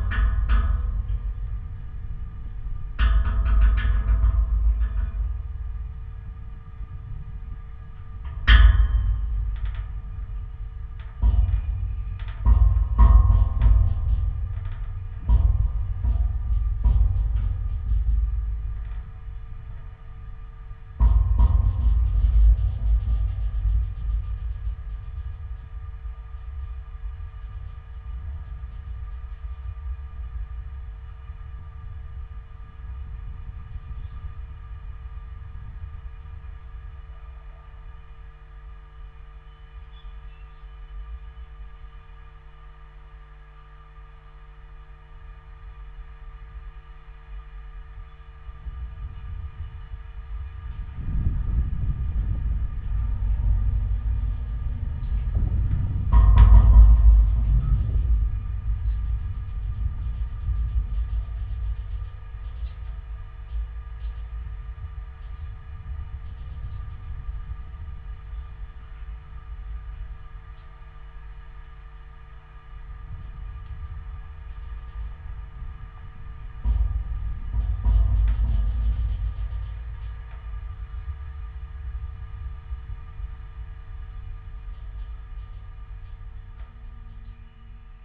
Kareela, NSW, Australia - Black gate at the edge of Kareela oval, near my house
After waiting for months for my contact microphone to arrive (which i sent off the be fixed and got a replacement instead) due to postage difficulties, I was keen to get out and get some recordings!
I should mention the photo on Google Earth at the time of me posting this is out of date and is a few years old. There is a different gate now, as well as all the vegetation behind being removed.
Recorded with two JRF contact microphones (c-series) into a Tascam DR-680.
Kirrawee NSW, Australia, 6 September 2014, 11:00